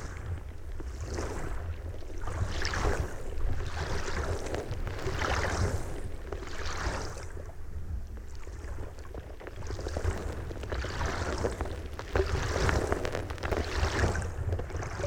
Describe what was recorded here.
hydrophone burried in the sand, under water. and to get more " low atmosphere" I sticked LOM geophone on the shore of the lake...